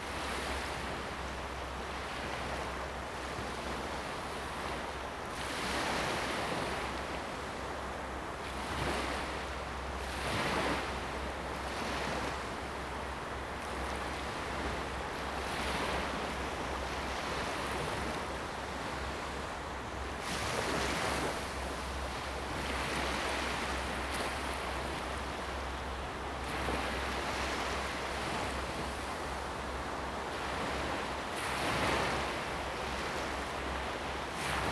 蛤板灣, Hsiao Liouciou Island - Sound of the waves
Sound of the waves, At the beach
Zoom H2n MS+XY